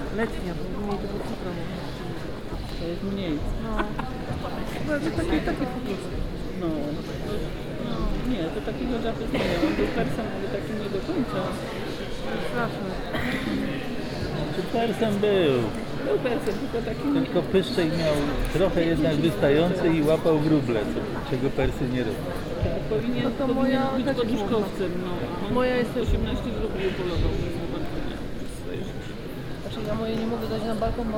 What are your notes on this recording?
Binaural recording of a crowd gathering before contemporary music concert at NOSPR. Recorded with Soundman OKM on Sony PCM D100